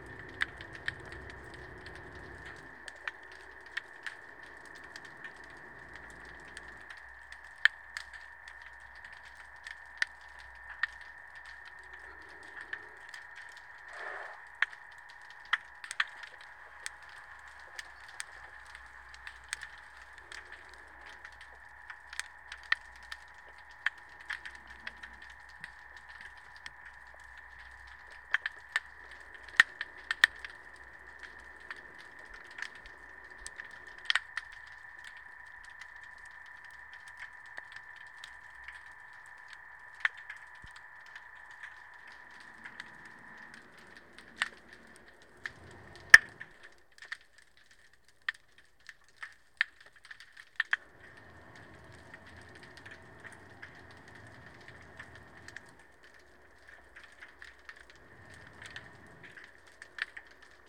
arriving of the tourist boat captured underwater